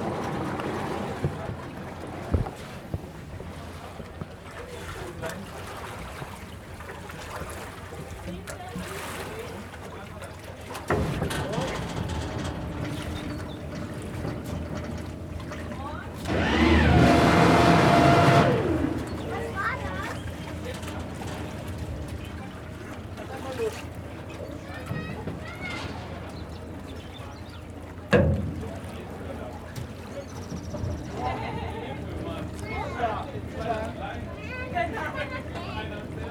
Monheim (Rhein), Deusser Haus / Marienkapelle, Monheim am Rhein, Deutschland - Monheim am Rhein - Piwipper Fähre
Crossing the Rhine with the ferry boat "Piwipper Fähre"
soundmap NRW
topographic field recordings and soundscapes
Kreis Mettmann, Nordrhein-Westfalen, Deutschland, 16 June 2022